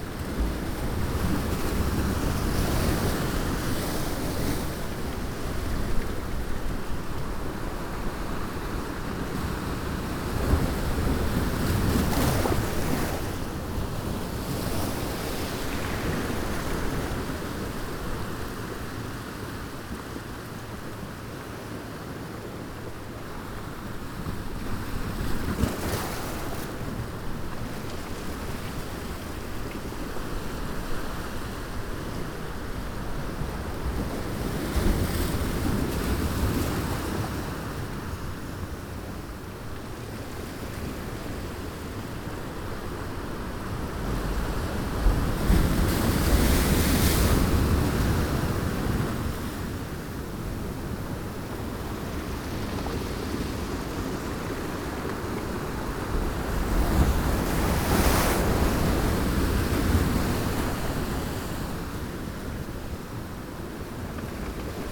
Portugal - Breaking waves
Ponta do Sol, breaking waves against a concrete blocks, wind and rocks, church audio binaurals with zoom h4n
1 December 2012